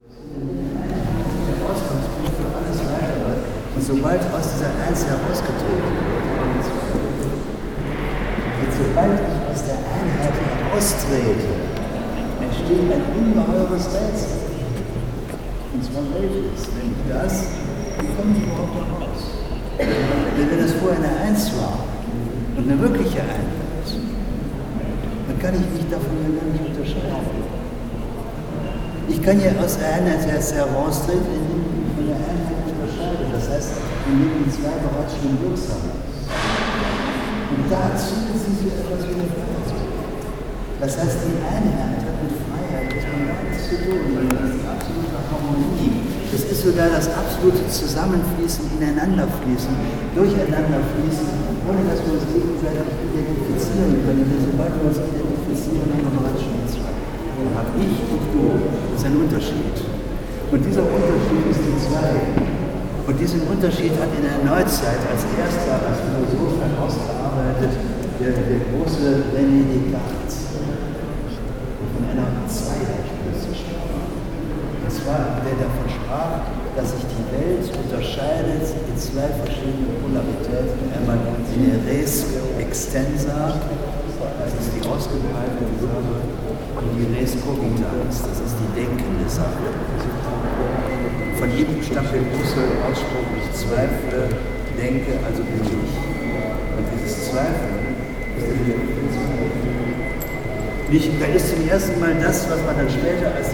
Düsseldorf, Grabbeplatz, museum - düsseldorf, grabbeplatz, k20 museum
inside the K20 museum for contemporary art at the front side during a beuys exhibition. johannes stüttgen a buys student and leader of the FIU giving a speech to some guests in front of the work zeige deine wunden
soundmap d - social ambiences, art spaces and topographic field recordings
24 January 2011, 15:04